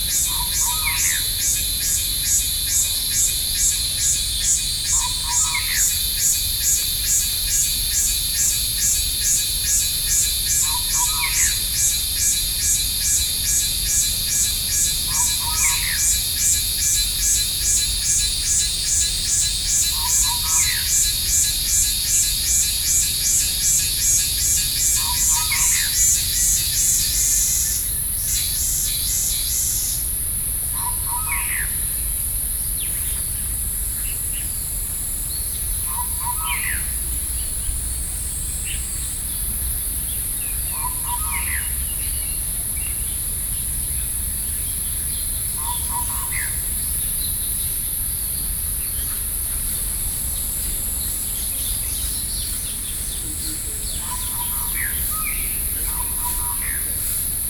Beitou, Taipei - Morning
Morning in the park, Sony PCM D50 + Soundman OKM II
台北市 (Taipei City), 中華民國, 22 June 2012